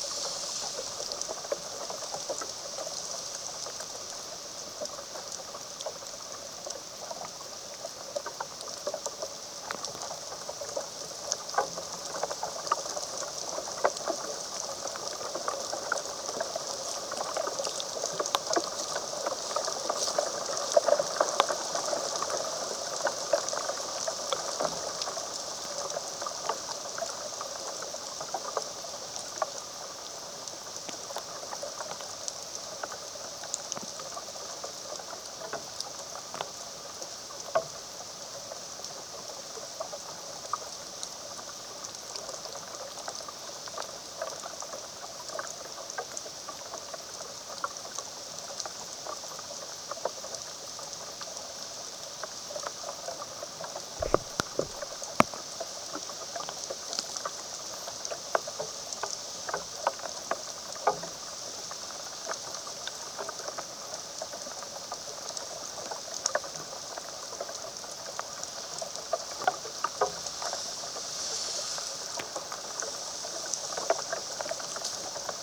rain falling on the branches of a large oak tree, recorded with contact microphones
Maribor, Slovenia